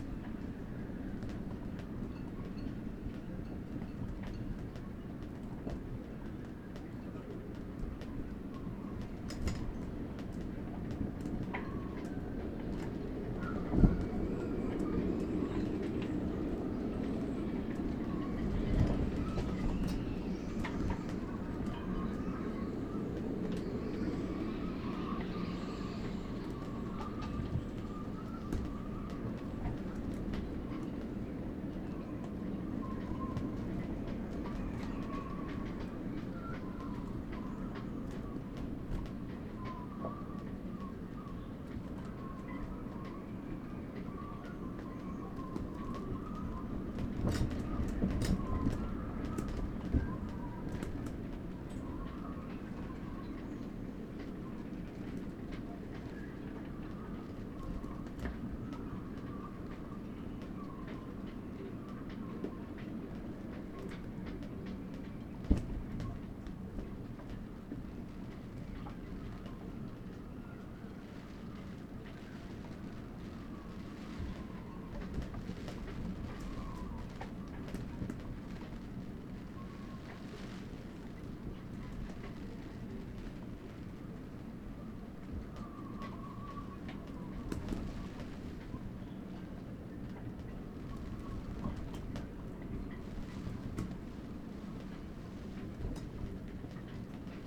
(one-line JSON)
{"title": "workum, het zool: marina, berth h - the city, the country & me: marina, aboard a sailing yacht", "date": "2009-07-18 13:32:00", "description": "wind flaps the tarp\nthe city, the country & me: july 18, 2009", "latitude": "52.97", "longitude": "5.42", "altitude": "1", "timezone": "Europe/Berlin"}